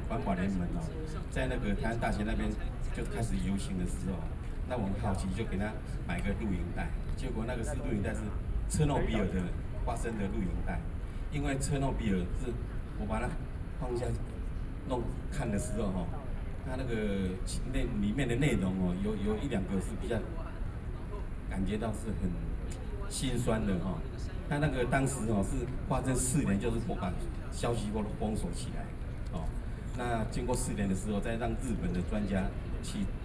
anti–nuclear power, Civic Forum, Sony PCM D50 + Soundman OKM II